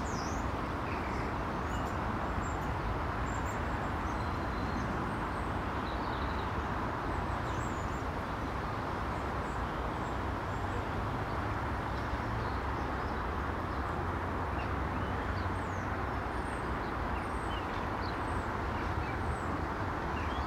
Contención Island Day 12 inner southeast - Walking to the sounds of Contención Island Day 12 Saturday January 16th
The Drive Moor Crescent Moorside Little Moor Jesmond Dene Road
A westie
is scared of my hat
and has to be dragged past by her owner
At the end of the lane
a couple
unload pallets from the boot of their car
into the allotments
Treetop starlings call